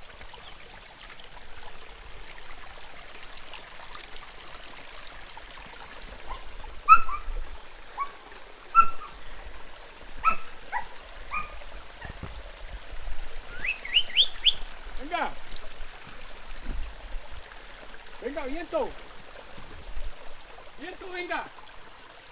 Anatolia Manizales Nacimiento de Agua
El nacimiento de Agua de la finca Anatolia, de la Familia de Duna.